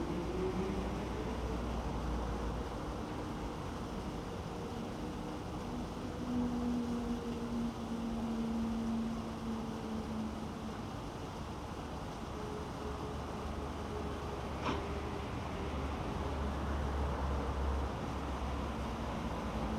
Rummelsburg, Berlin, Germany - Brown coal barges unloading, Heizkraftwerk Klingenberg
Brown coal arriving from opencast mines by barge is unloaded by huge grabbers on cranes that swing their load in a graceful arc to dump it on the vast coal stores. The thundering of the grabber into the barge is accompanied by the sounds of water pouring into the canal and heavy traffic in this bleak, but impressive, industrial area.